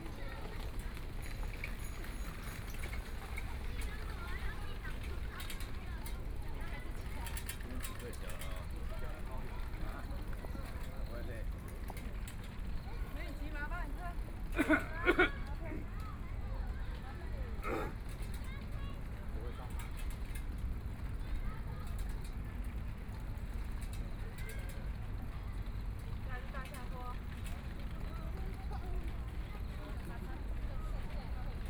淡水區竿蓁里, New Taipei City - Take a walk
Walking along the track beside the MRT, Take a walk, Bicycle voice, MRT trains
Please turn up the volume a little. Binaural recordings, Sony PCM D100+ Soundman OKM II
New Taipei City, Taiwan, 5 April 2014